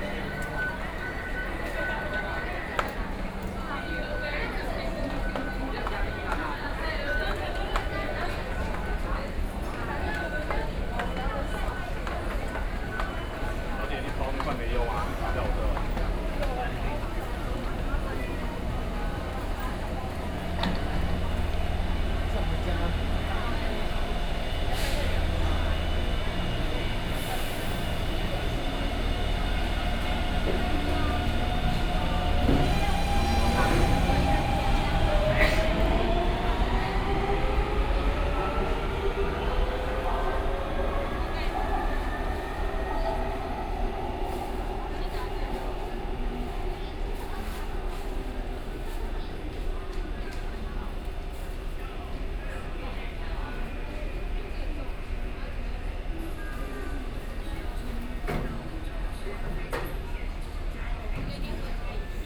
Ximen Station, Taipei - soundwalk
Walk from the station entrance into the station via the underpass, Waiting at the train station platform, Binaural recordings, Sony PCM D50 + Soundman OKM II
Zhongzheng District, Taipei City, Taiwan, October 2013